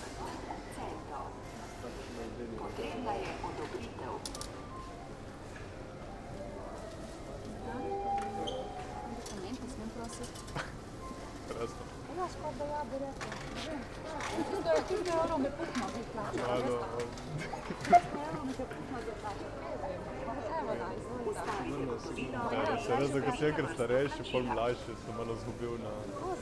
Nova Gorica, Slovenija, Kulandija - 300e mi je malo preveč...